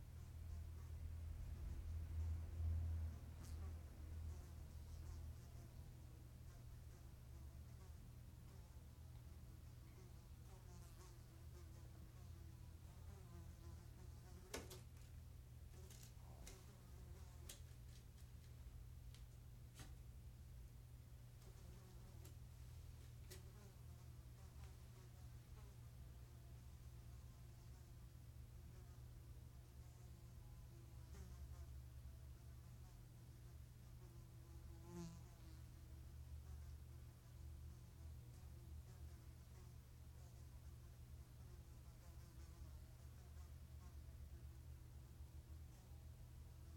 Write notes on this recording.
mosquito voando de noite dentro de casa. Fly at home. Night.